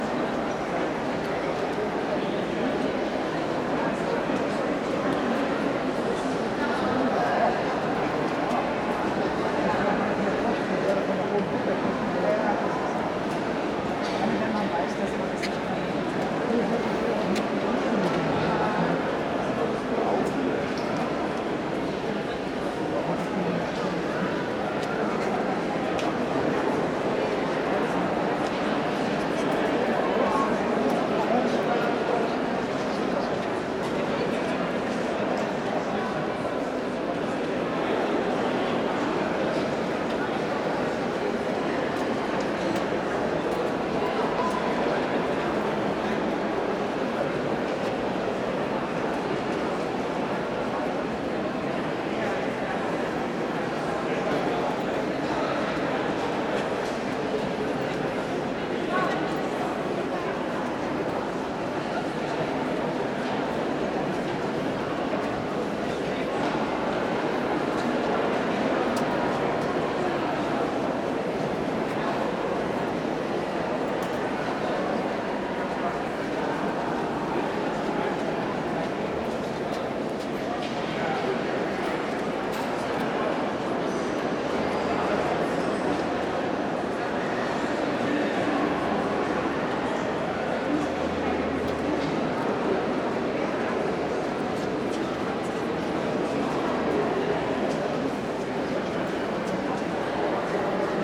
Voices, reverberation in Dome. Sony MS microphone, DAT recorder
Deutschland, European Union, 13 September, 10:13